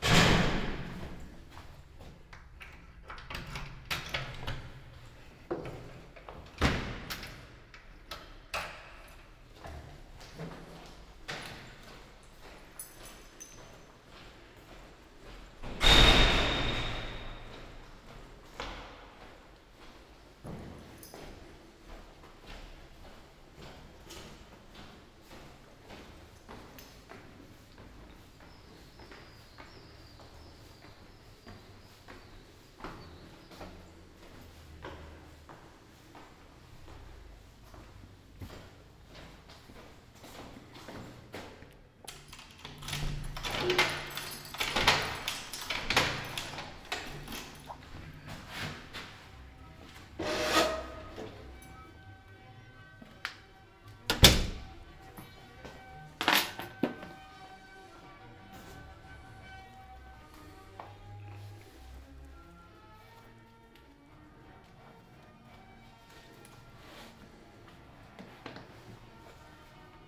Ascolto il tuo cuore, città. I listen to your heart, city. Several Chapters **SCROLL DOWN FOR ALL RECORDINGS - “La flânerie après trois mois aux temps du COVID19”: Soundwalk
“La flânerie après trois mois aux temps du COVID19”: Soundwalk
Chapter CIII of Ascolto il tuo cuore, città. I listen to your heart, city
Wednesday, June 10th 2020. Walking in the movida district of San Salvario, Turin ninety-two days after (but day thirty-eight of Phase II and day twenty-five of Phase IIB and day nineteen of Phase IIC) of emergency disposition due to the epidemic of COVID19.
Start at 7:31 p.m., end at h. 8:47 p.m. duration of recording 38'23'', full duration 01:15:52 *
As binaural recording is suggested headphones listening.
The entire path is associated with a synchronized GPS track recorded in the (kml, gpx, kmz) files downloadable here:
This soundwalk follows in similar steps to exactly three months earlier, Tuesday, March 10, the first soundtrack of this series of recordings. I did the same route with a de-synchronization between the published audio and the time of the geotrack because: